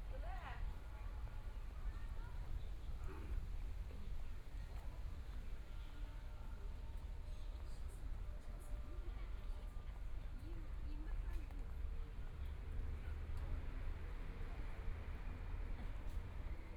Yangpu Park, Yangpu District - Walking through the park
Walking through the park, Binaural recording, Zoom H6+ Soundman OKM II